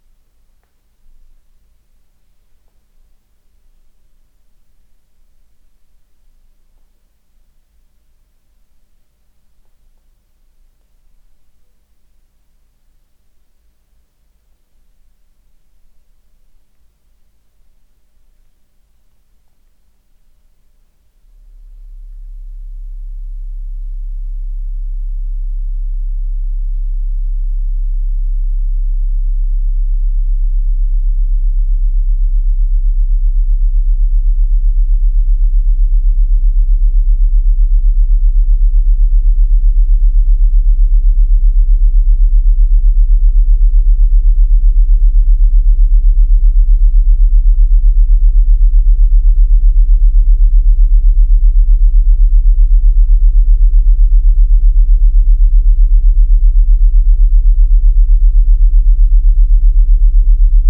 fröndenberg, niederheide, garage of family harms
third recording of the sound and light installation of finnbogi petursson in the garage of the family harms - here interrupted by spontaneous laughter of the families son.
9 April 2010, ~17:00